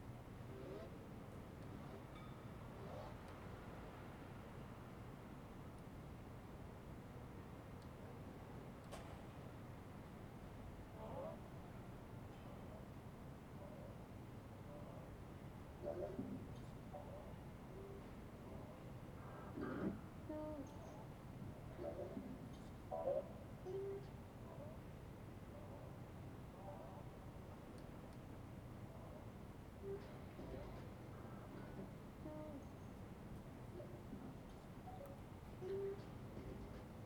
Ascolto il tuo cuore, città. I listen to your heart, city. Several chapters **SCROLL DOWN FOR ALL RECORDINGS** - Round midnight with sequencer but without LOL
"Round midnight with sequencer but without LOL in background in the time of COVID19" Soundscape
Chapter CXX of Ascolto il tuo cuore, città. I listen to your heart, city
Tuesday, July 27th – Wednesday, July 28th 2020, four months and seventeen days after the first soundwalk (March 10th) during the night of closure by the law of all the public places due to the epidemic of COVID19.
Start at 11:47 p.m. end at 00:## a.m. duration of recording 20’14”